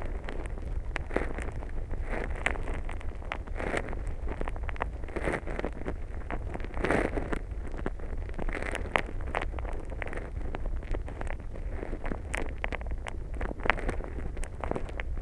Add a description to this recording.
A strange recording made into the Loire river. A contact microphone is buried into the river sand. It's the astonishing sound of small animals digging into the ground.